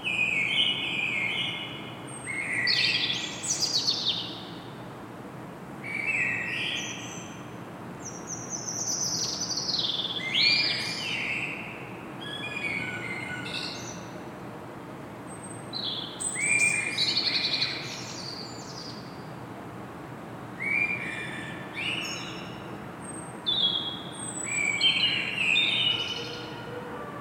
Saint-Gilles, Belgique - two blackbirds in the morning
Tech Note : Sony PCM-D100 internal microphones, wide position.
Saint-Gilles, Belgium, 3 February 2022, 07:35